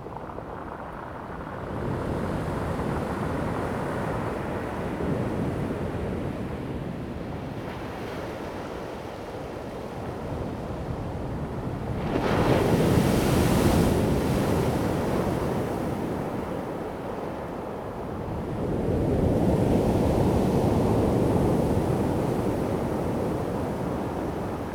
太麻里海岸, Taimali Township, Taitung County - Morning at the seaside
At the beach, Sound of the waves, birds sound
Zoom H2n MS+XY